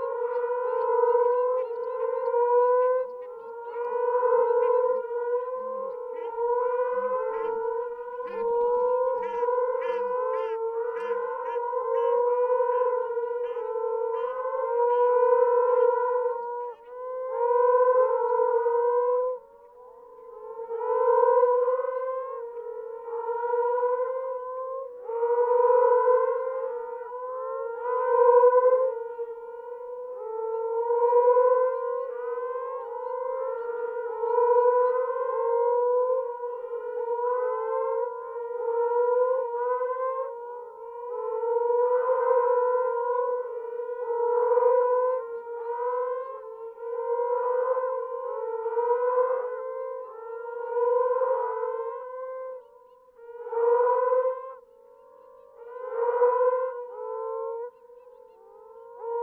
Geordie Bay Rd, Rottnest Island WA, Australien - Sounds of Moaning Frogs and Paradise Shelducks at night
Moaning Frogs calling from burrows in the ground. Shelducks calling from nearby lake, on a calm and warm night. Recorded with a Sound Devices 702 field recorder and a modified Crown - SASS setup incorporating two Sennheiser mkh 20 microphones.
Western Australia, Australia, 3 May